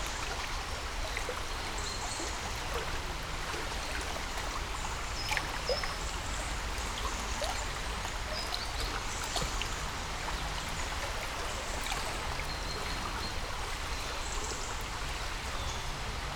Brje, Dobravlje, Slovenia - River Vipava
River Vipava and birds. Recorded with Lom Uši Pro.